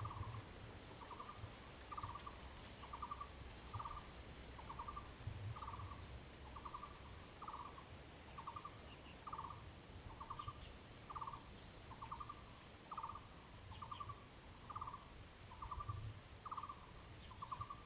東富村, Guangfu Township - Next to the woods
Beside bamboo, Birdsong sound, Insects sound, Cicadas sound, Traffic Sound, Very hot weather
Zoom H2n MS+XY